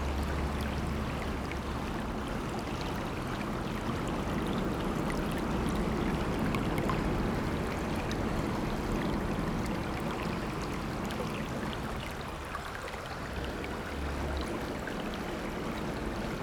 頭城鎮金盈里, Yilan County - Under the bridge
Under the bridge, The sound of water, Traffic Sound
Sony PCM D50+ Soundman OKM II